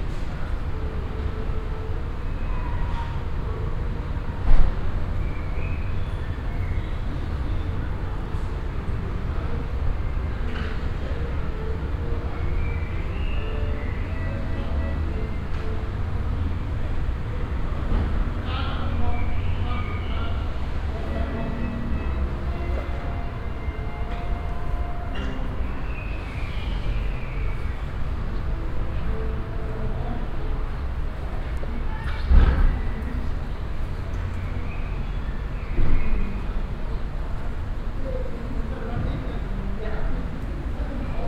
essen, at VHS
At the VHS on Ilse Menz Weg, as people pass by under the reverbing construction you partly hear the sound of instruments played by music students of the VHS.
Projekt - Stadtklang//: Hörorte - topographic field recordings and social ambiences